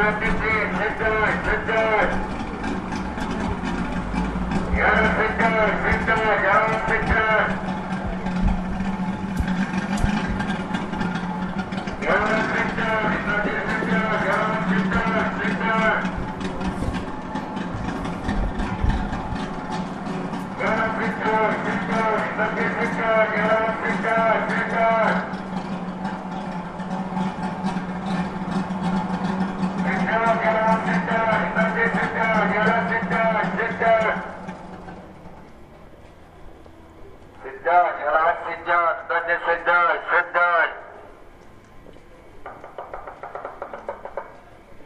:jaramanah: :street vendor II: - three